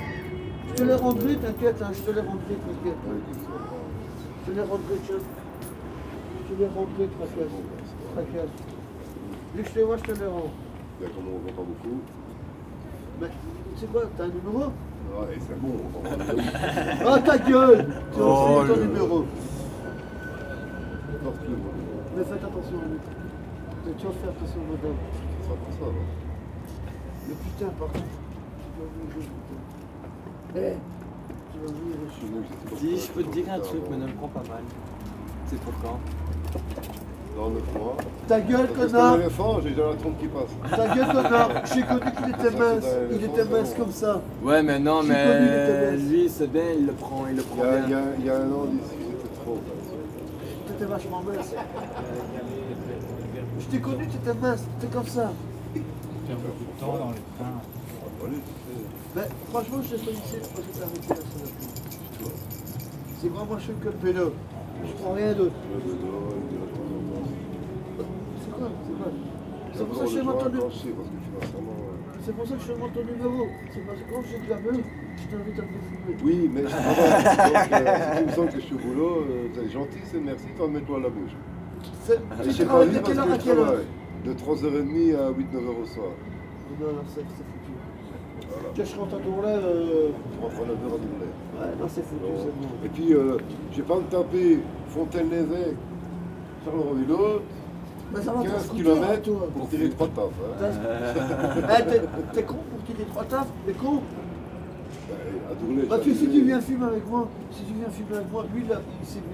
Charleroi, Belgique - Drug addicts
In front of the Charleroi station, some drug addict people discussing. French speaking, they discuss about what the had stolen in various stores. One says : I'm a very quiet person but I'm very violent. After, it's a walk into the station, with some glaucous music reverberating. At the end on the platform, a train is leaving to Namur.
Charleroi, Belgium, 15 December